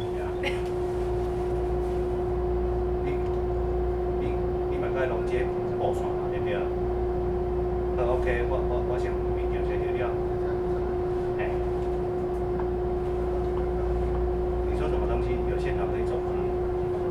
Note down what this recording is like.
from Zhongzhou Station to Luzhu Station, Trains traveling, Train crossing, Train broadcast message, Sony ECM-MS907, Sony Hi-MD MZ-RH1